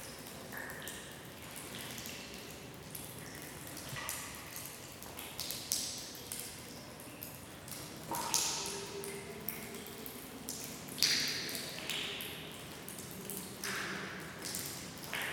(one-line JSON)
{"title": "Hotel Abandonado, Portugal - Water dripping in hotel abandonado", "date": "2019-04-23 15:21:00", "description": "In a cement room in the basement of the abandoned 'Hotel Monte Palace', water dripping from the ceiling into the puddle on the floor. You can also hear vehicles on the road outside and the distant voices of other people exploring.\nZoom H2n XY mics.", "latitude": "37.84", "longitude": "-25.79", "altitude": "562", "timezone": "GMT+1"}